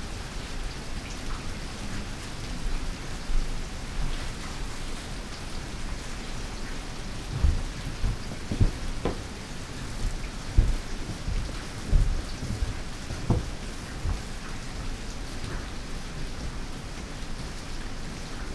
gardens sonority, kyoto rains
old wood, honey spirits
feet already cold
ears longing for rain curtains